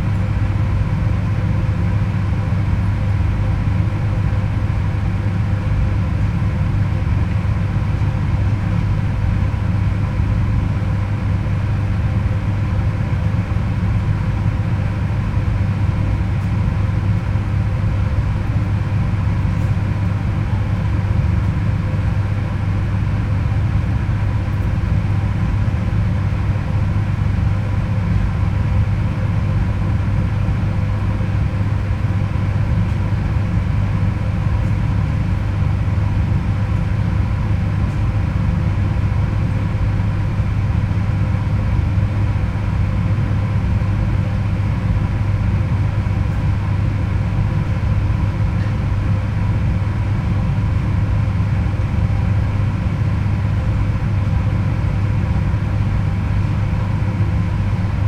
Colombarium ventilation 2
Fête des Morts
Cimetière du Père Lachaise - Paris
Ventilation, grille murale opposée
Paris, France, 1 November 2010, ~15:00